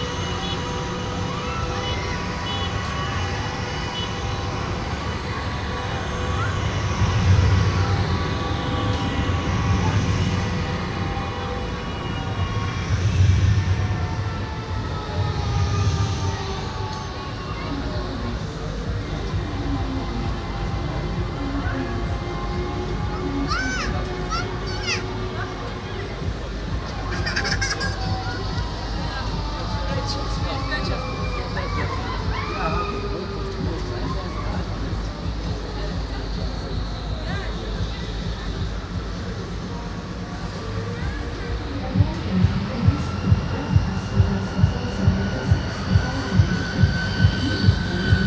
The annual Dutch celebration of Koningsdag (Kings day) with markets, fair and many different events. Recorded with a Zoom H2 with binaural mics.
Den Haag, Netherlands, 27 April